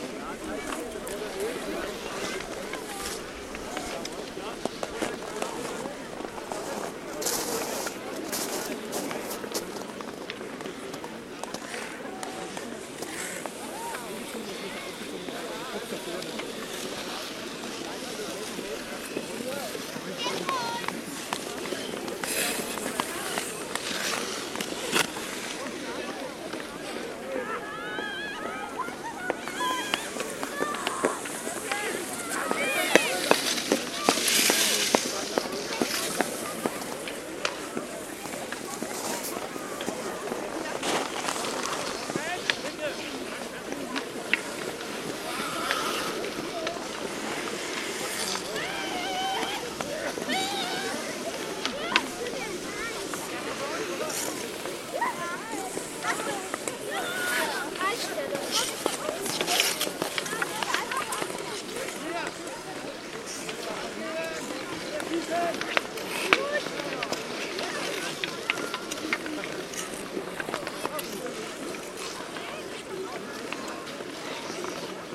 Erlangen, Deutschland, Neuweiher, Skating - skating
Skating and Icehokey
- olympus ls-5
Deutschland, European Union, 5 February 2012